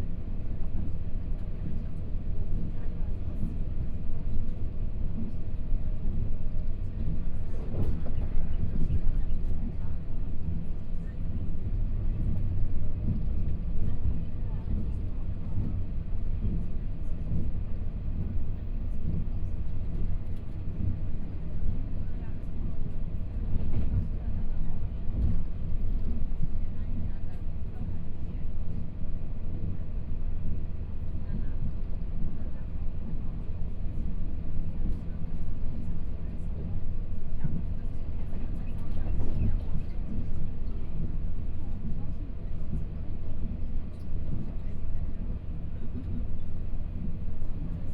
Interior of the train, from Ruiyuan Station to Guanshan Station, Binaural recordings, Zoom H4n+ Soundman OKM II
Guanshan Township, Taitung County, Taiwan, 18 January 2014, 11:25